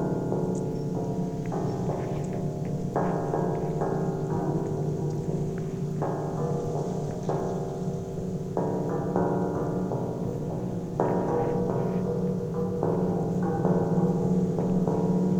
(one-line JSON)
{"title": "Tallinn, Baltijaam sculpture interaction - Tallinn, Baltijaam sculpture interaction (recorded w/ kessu karu)", "date": "2011-04-20 16:19:00", "description": "hidden sounds, interaction with a giant spring sculpture outside Tallinns main train station.", "latitude": "59.44", "longitude": "24.74", "timezone": "Europe/Tallinn"}